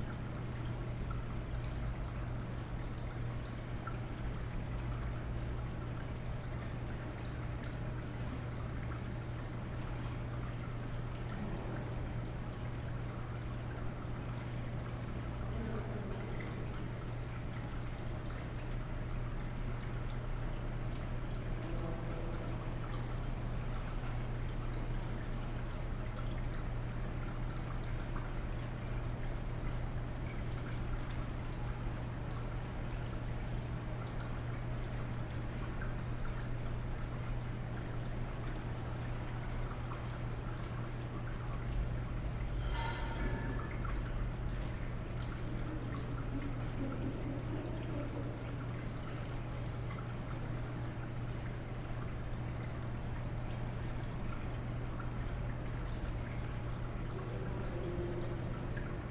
Recording of chambers street train stop during covid-19.
The station had only a few passengers waiting for the train.
There was a cavernous atmosphere with sounds echoing and rainwater running on the tracks.